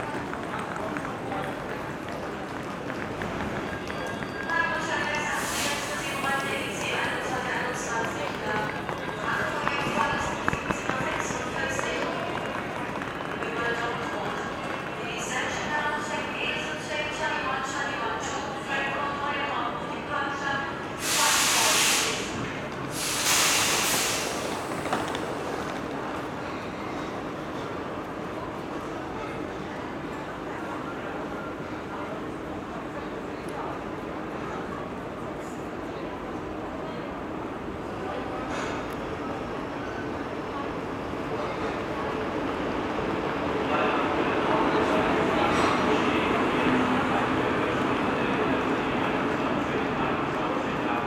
Hamburg main station - listening to the trollley cases and passers-by. [I used Tascam DR-07 for recording]
18 June 2010, 18:00